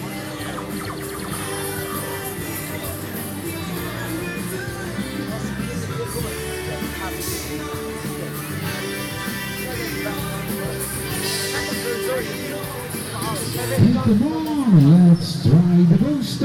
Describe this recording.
Koninginnekermis, Den Haag. The 'Queens fair', an annual fair that takes place around Queens day (April 30th)